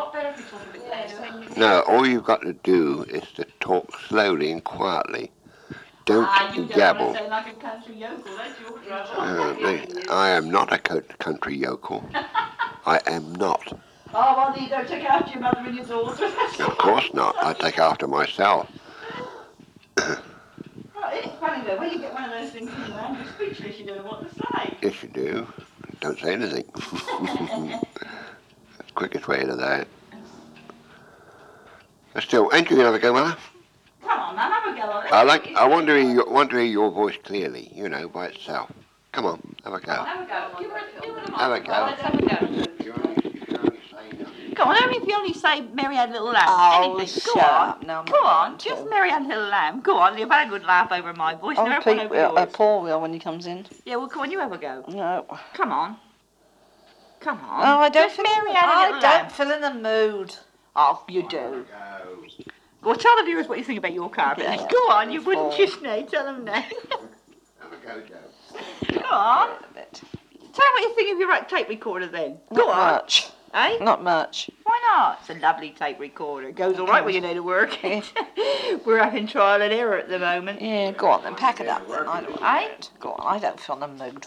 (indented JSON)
{
  "title": "Netley, Hampshire, UK - 'Our First tape' 1965",
  "date": "2012-11-27 20:13:00",
  "description": "This is transcription of reel to reel footage of my grand parents and great grand parents recorded in 1965, but transcribed late last year",
  "latitude": "50.87",
  "longitude": "-1.35",
  "altitude": "10",
  "timezone": "Europe/London"
}